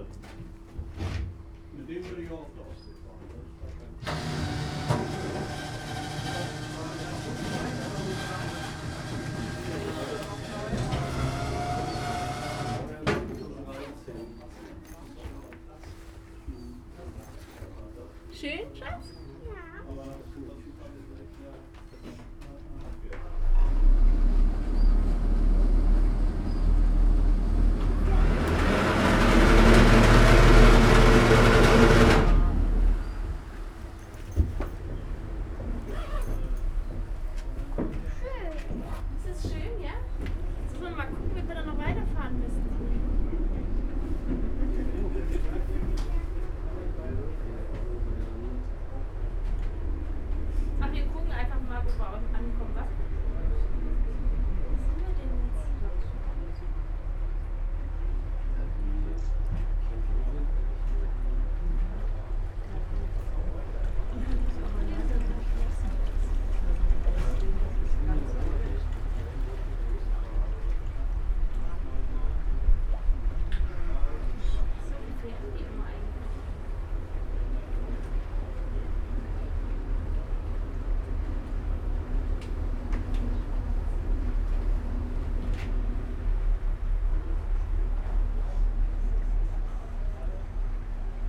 Oberschöneweide, Berlin, Deutschland - river Spree, public transport ferry boat
Berlin, Plänterwald, river Spree, crossing the river on a public transport ferry boat.
(Sony PCM D50, DPA4060)